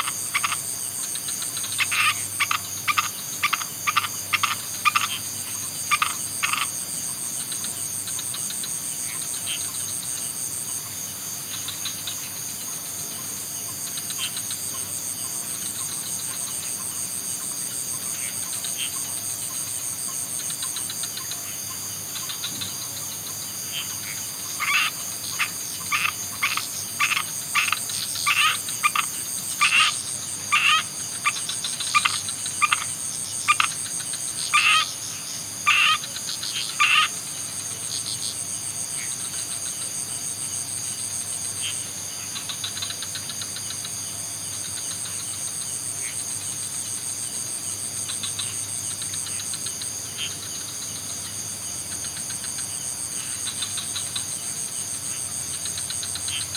Ubud, Bali, Indonesia - Night frogs and crickets